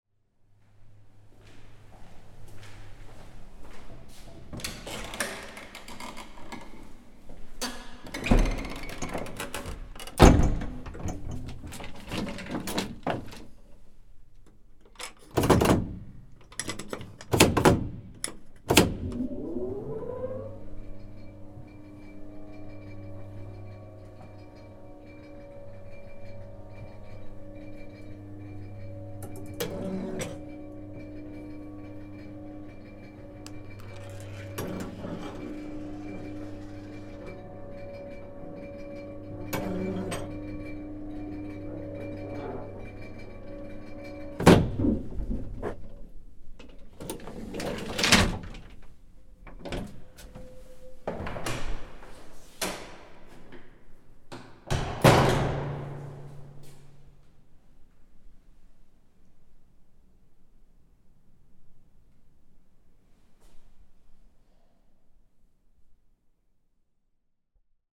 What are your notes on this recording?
The same elevator from ground floor to 5th floor